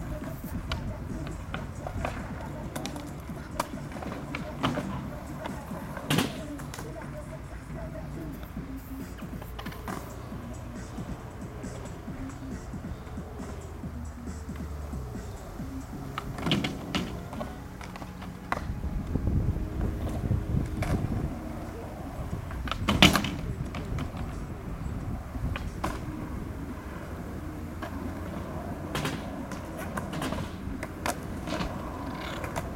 lippstadt, skateboarders
skateboard training area.
recorded june 23rd, 2008.
project: "hasenbrot - a private sound diary"